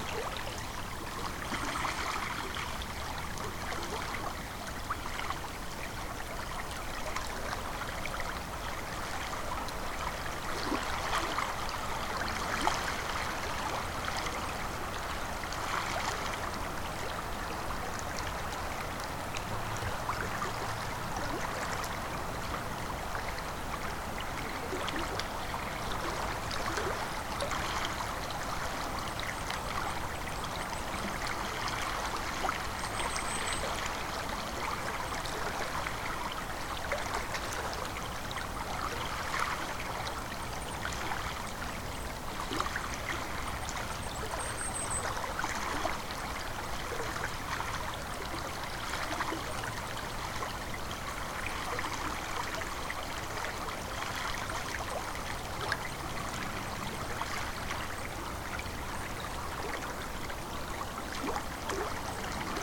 Anyksciai, Lithuania, listening to river Sventoji
Standing on a trail path and listening to river Sventoji
Utenos apskritis, Lietuva, 1 November, 16:45